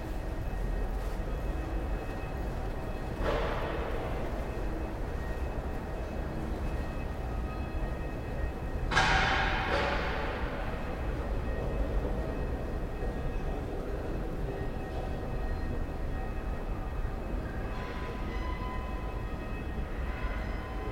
U Stýblů Praha, Česká republika - Passage
live in the passage